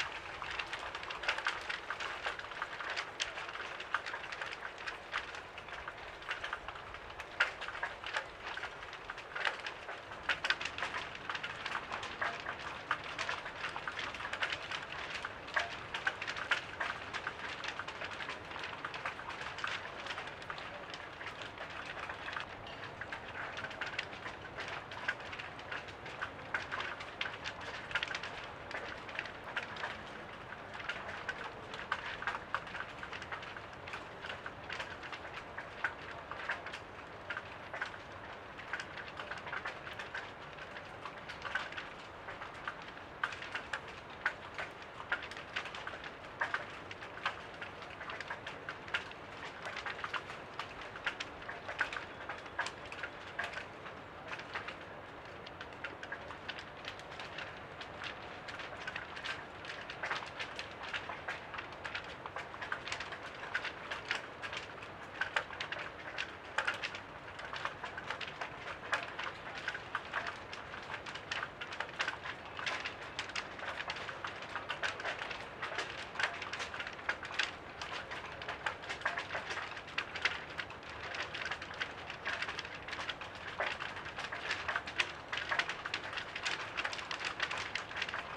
Parque das Nações, Lisboa, Portugal - Flags on the wind - Flags on the wind
Flapping flags on the wind. Recorded with a AT BP4025 stereo XY mic into a SD mixpre6.